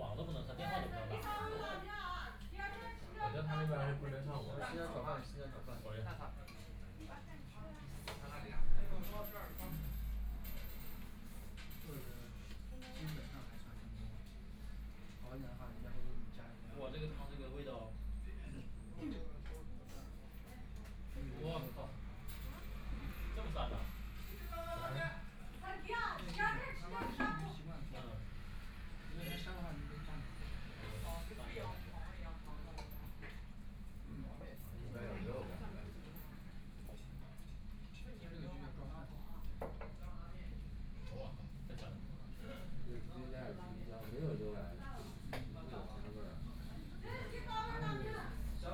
上海浦東新區 - In the ramen shop
In the ramen shop, Binaural recording, Zoom H6+ Soundman OKM II
Shanghai, China, 21 November 2013, ~12:00